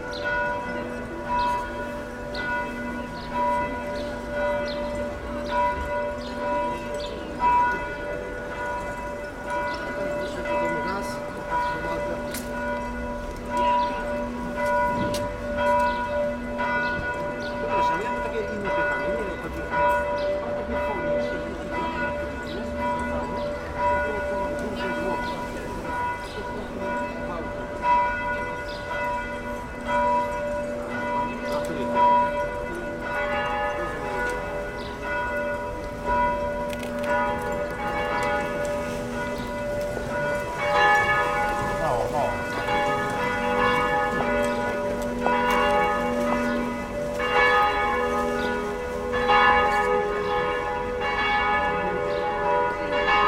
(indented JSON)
{
  "title": "noon bells in town hall square, Torun Poland",
  "date": "2011-04-07 12:00:00",
  "description": "numerous church bells in the Torun town hall square",
  "latitude": "53.01",
  "longitude": "18.60",
  "altitude": "56",
  "timezone": "Europe/Warsaw"
}